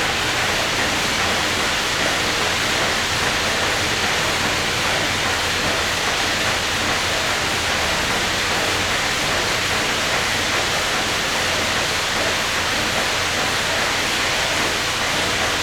Ln., Zhongxing N. St., Sanchong Dist., New Taipei City - Sound from Factory
Sound from Factory
Zoom H4n +Rode NT4